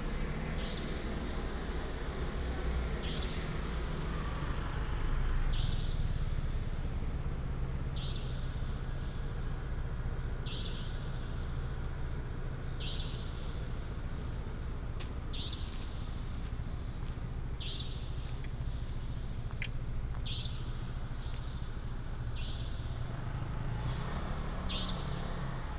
Night street, Bird sound, Traffic sound
25 April 2018, 00:19